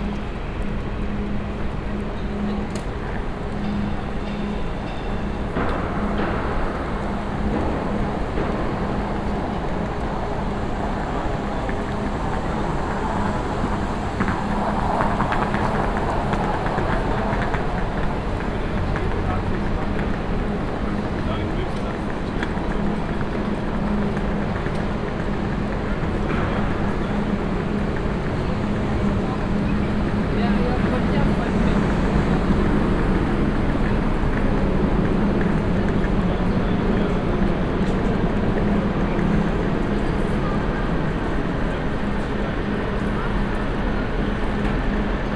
leipzig, main station, walk thru the station
at leipzig main station, footwalk thru the station, anouncements and luggage roller
soundmap d: social ambiences/ in & outdoor topographic field recordings
18 June, 11:17